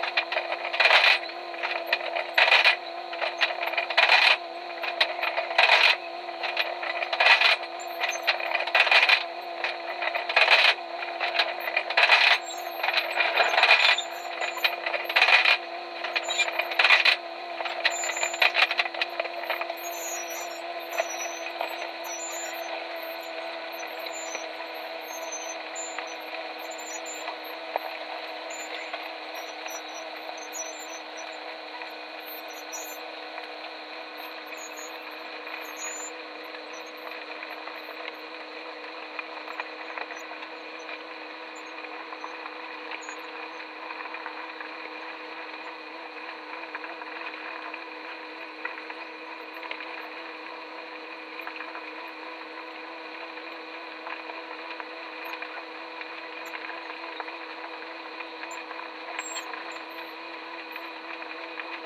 Mine 7 is the only active mine in Longyearbyen and provides the town with coal. The recordings are from in the mine. The noise level inside is immense and I recorded by using contact mics on the different infrastructure connected to the machinery. The field recording is a part of The Cold Coast Archive.